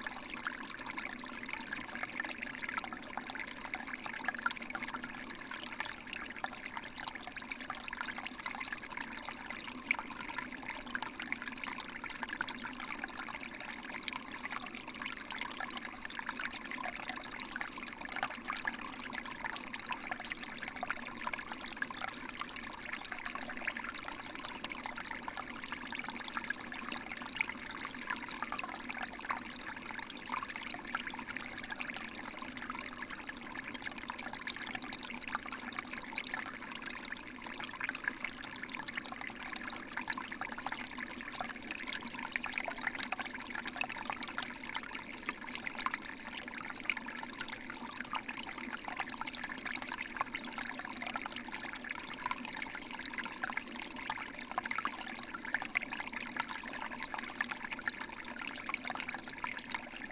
Source-Seine, France - Seine stream
The Seine river is 777,6 km long. This is here the sound of the countless streamlets which nourish the river. Here water is flowing from sedge in a thicket.